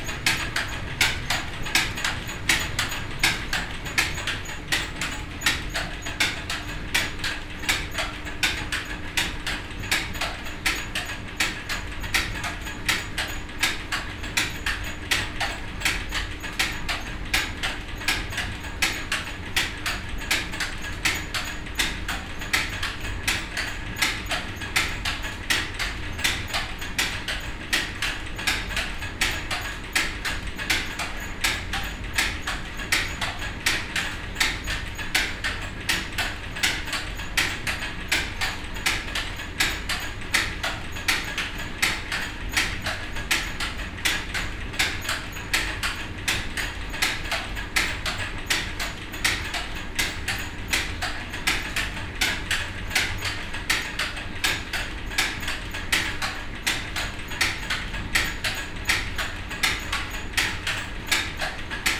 enscherange, rackesmillen, belt drive
Inside the historical mill, in a room at the ground floor directly behind the mills running water wheel. The sound of the bell drive running with a constructed imbalance here that operates the mechanics in the first floor.
Endcherange, Rackesmillen, Riemenantrieb
Innerhalb der Mühle in einem Raum im Erdgeschoss direkt hinter dem laufenden Wasserrad. Die Känge des Riemenantriebs der hier mit einer Unwucht konstruiert die Maschinerie im 1. Stock antreibt.
La roue du moulin. Le bruit de l’eau qui s’accumule dans les espaces de la roue à aubes et qui commence à actionner la roue.
23 September 2011, 19:09